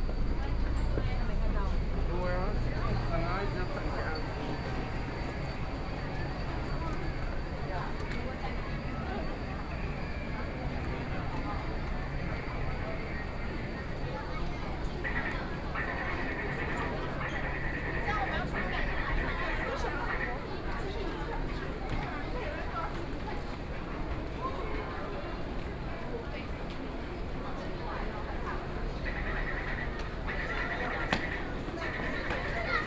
Taipei Main Station, Taiwan - soundwalk
Convert other routes at the station, Sony PCM D50 + Soundman OKM II